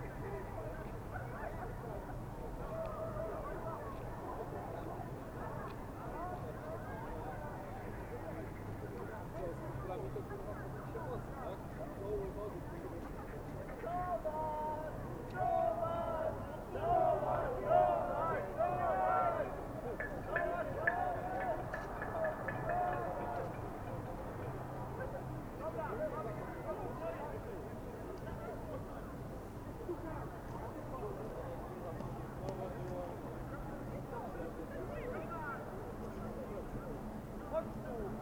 Kortowo, Olsztyn, Polska - Engineer accolade (1)
University campus. Local students tradition is that freshly graduated engineer or master of science must be thrown into the Kortowskie lake by his collegues. Also in winter...
Olsztyn, Poland, 8 February 2013